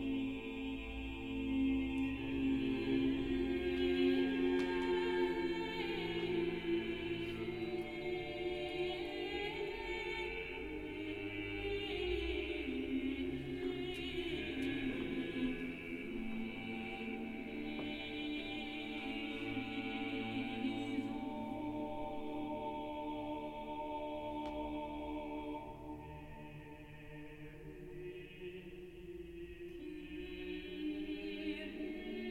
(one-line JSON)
{"title": "Hof van Busleyden, Mechelen, België - Kyrie Eleison", "date": "2019-02-02 16:14:00", "description": "[Zoom H4n Pro] Kyrie Eleison, exhibit about polyphony in the museum.", "latitude": "51.03", "longitude": "4.48", "altitude": "6", "timezone": "Europe/Brussels"}